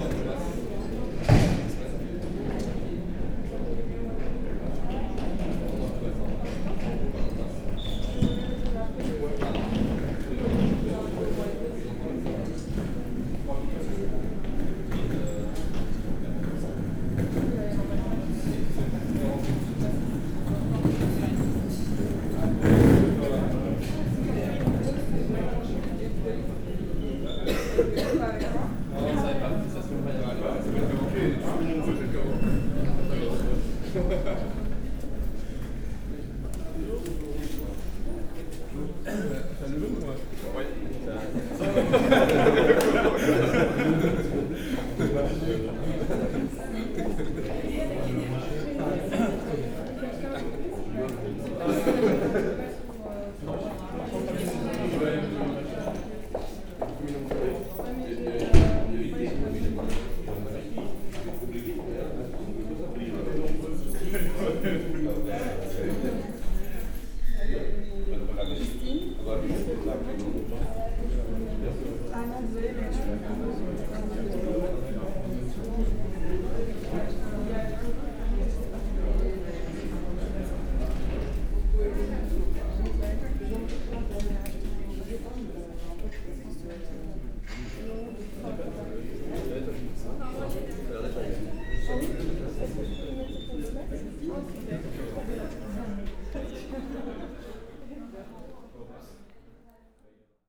Centre, Ottignies-Louvain-la-Neuve, Belgique - The station
In the train station main corridor, people are discussing early in the morning. Everybody is weary !